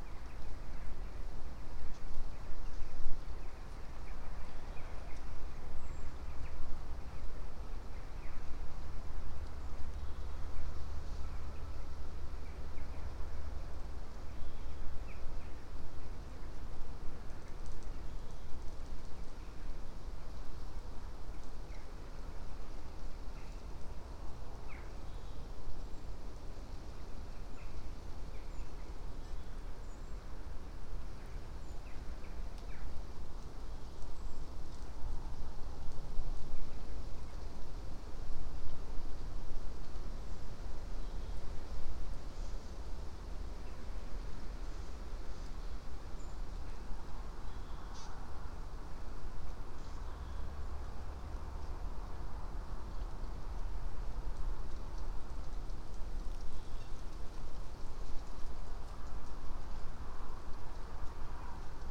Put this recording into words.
Tånga forest, Vårgårda. Recorded with Tascam DR-100 mk3 and primo EM-172 stereo pair.